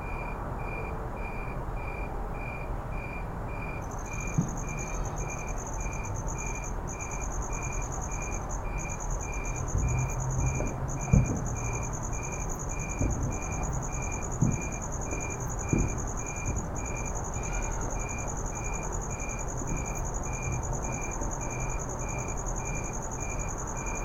{"title": "Emerald Dove Dr, Santa Clarita, CA, USA - 4th of July ambience", "date": "2020-07-04 21:01:00", "description": "Several minutes of firework ambience from afar. A few closely explosions, but most softly in the distance.", "latitude": "34.41", "longitude": "-118.57", "altitude": "387", "timezone": "America/Los_Angeles"}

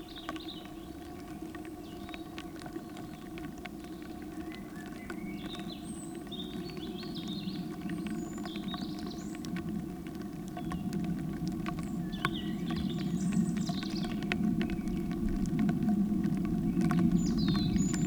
{"title": "Lithuania, Nolenai, found object: pipes - found object: pipes", "date": "2012-04-19 16:50:00", "description": "rubbish left by people in nature...this time it were some kind of pipes from automobile. microphones placed inside and raining autside", "latitude": "55.56", "longitude": "25.60", "altitude": "125", "timezone": "Europe/Vilnius"}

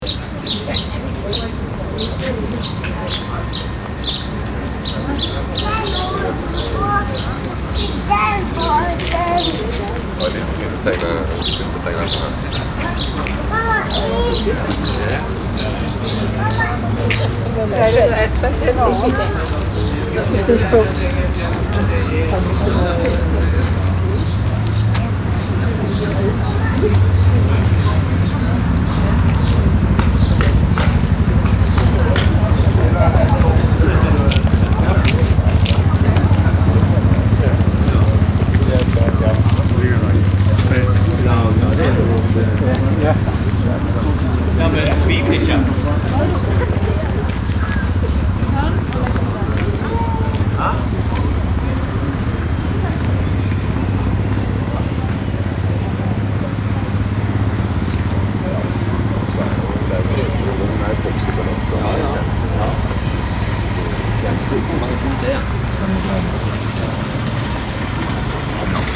{"title": "Haugesund, Norwegen - Norway, Haugesund, harbour", "date": "2012-07-28 12:10:00", "description": "At the promenade of the harnour in Haugesund on a mild windy summer day. The harbour atmosphere with vivid birds, passengers talking, a water pump and sounds of ship motors.\ninternational sound scapes - topographic field recordings and social ambiences", "latitude": "59.41", "longitude": "5.26", "altitude": "7", "timezone": "Europe/Oslo"}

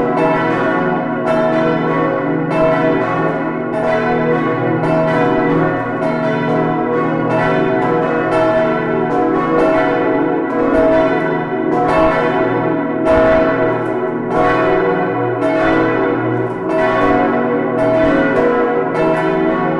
{"title": "Nivelles, Belgique - Nivelles bells", "date": "2014-10-04 12:00:00", "description": "The bells of the Nivelles church at twelve, a beautiful melody of four bells. Before the bells ringing, there's an automatic tune played on the carillon. Recorded inside the tower with Tim Maertens ans Thierry Pauwels, thanks to Robert Ferrière the carillon owner.", "latitude": "50.60", "longitude": "4.32", "altitude": "101", "timezone": "Europe/Brussels"}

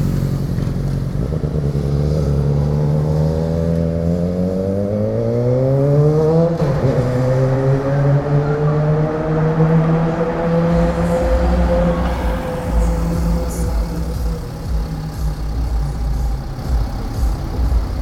{"title": "Ляховка, Минск, Беларусь - A corner near the Krashtal shop", "date": "2016-08-06 16:26:00", "latitude": "53.89", "longitude": "27.57", "altitude": "201", "timezone": "Europe/Minsk"}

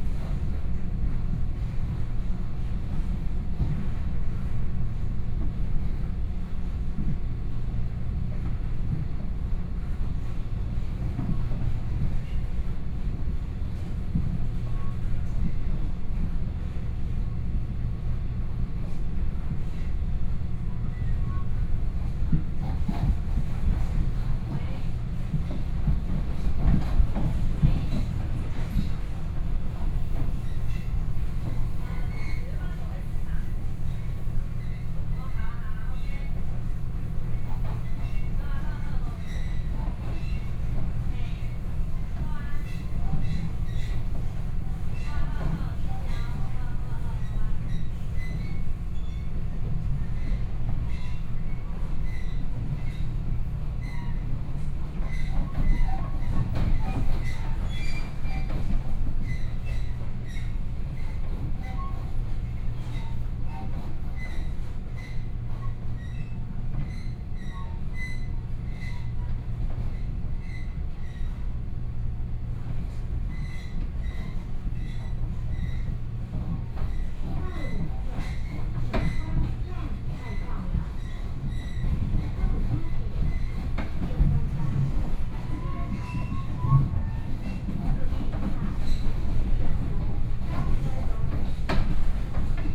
{"title": "Dadu District, Taichung City - Local Express", "date": "2013-10-08 15:23:00", "description": "from Changhua Station to Chenggong Station, Binaural recordings, Sony PCM D50+ Soundman OKM II", "latitude": "24.11", "longitude": "120.58", "altitude": "28", "timezone": "Asia/Taipei"}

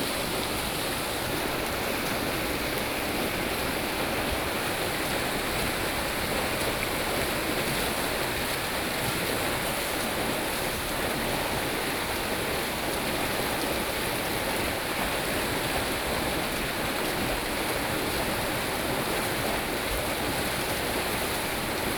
{"title": "Fuxing Rd., 吉安鄉福興村 - Waterwheel", "date": "2014-08-28 08:01:00", "description": "Waterwheel, Traffic Sound", "latitude": "23.97", "longitude": "121.56", "altitude": "47", "timezone": "Asia/Taipei"}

